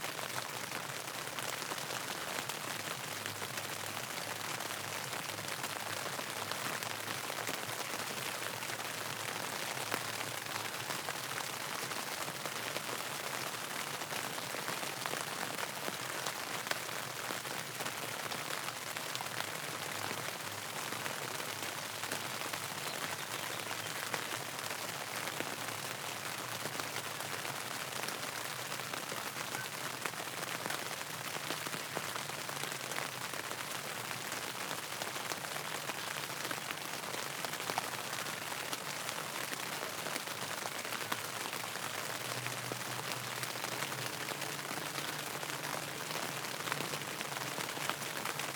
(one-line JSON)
{"title": "Wollombi NSW, Australia - Rain under the tent canopy", "date": "2014-11-16 07:30:00", "latitude": "-32.94", "longitude": "151.14", "altitude": "99", "timezone": "Australia/Sydney"}